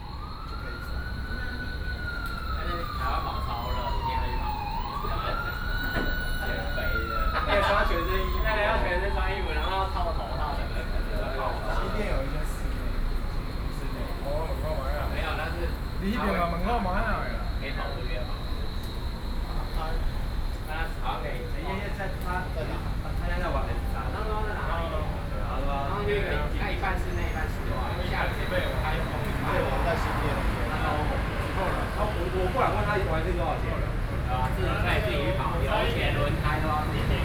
Taipei, Taiwan - Chat
Before the opening of the exhibition in the gallery outside musical performers chat, Sony PCM D50 + Soundman OKM II
台北市 (Taipei City), 中華民國, 29 June